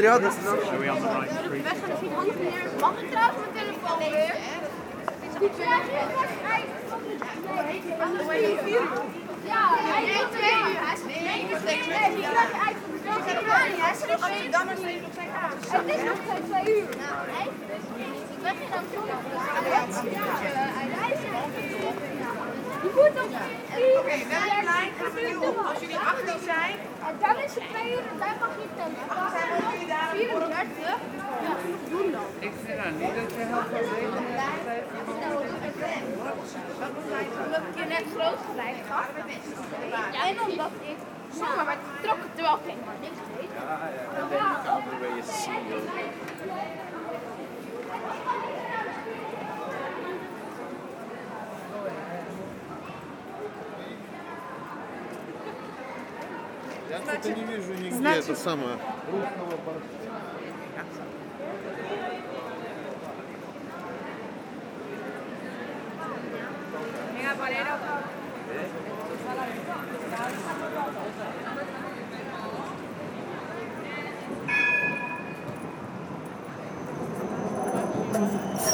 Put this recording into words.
Lively street ambiance into one of the main commercial street of the center of Amsterdam.